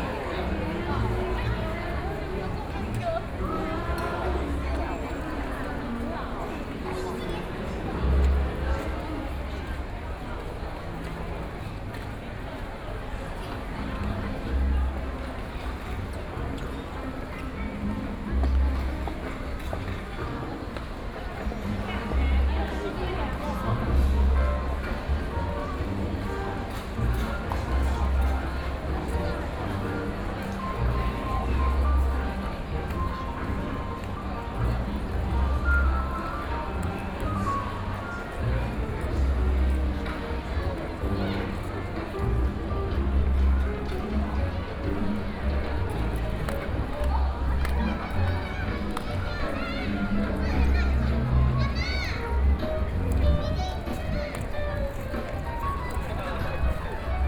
National Concert Hall - The plaza at night
The plaza at night, People coming and going, The distant sound of jazz music, Sony PCM D50 + Soundman OKM II
Taipei City, Taiwan